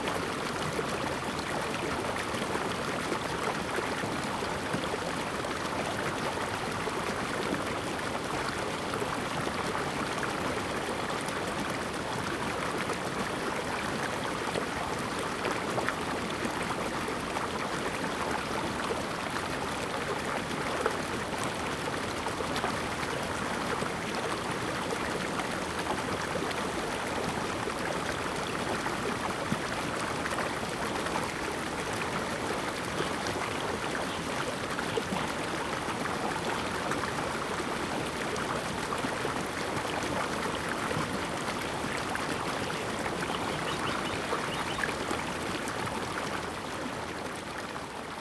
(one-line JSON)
{"title": "初英親水生態公園, 南華村 - Streams", "date": "2014-08-28 09:02:00", "description": "Streams of sound, Hot weather\nZoom H2n MS+XY", "latitude": "23.95", "longitude": "121.54", "altitude": "82", "timezone": "Asia/Taipei"}